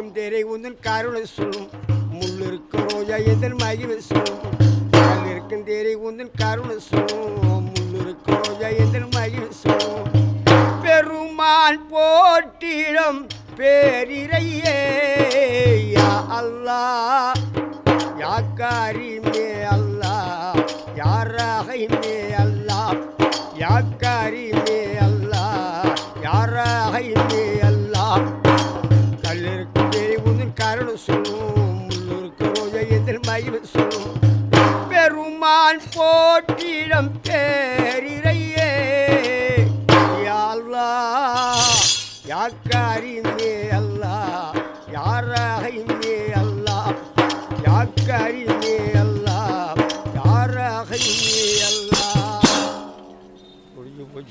Hyder Ali St, MG Road Area, Puducherry, Inde - Pondicherry - Le musicien du vendredi.
Pondicherry - 8 rue Hyder Ali
Le musicien du vendredi.